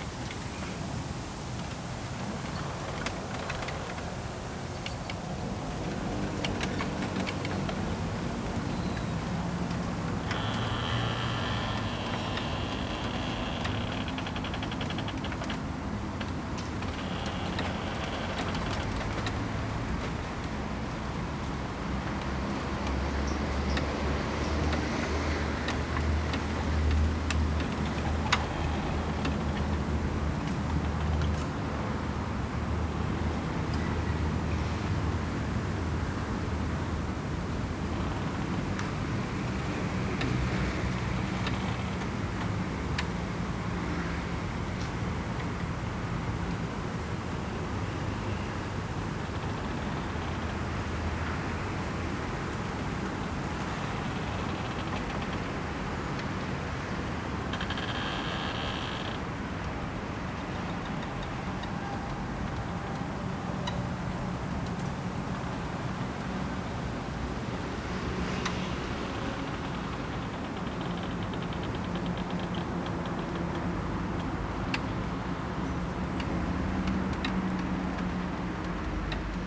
Jincheng Park, Tucheng Dist., New Taipei City - Bamboo forest
Bamboo forest, Traffic Sound
Zoom H4n +Rode NT4
Tucheng District, New Taipei City, Taiwan, December 2011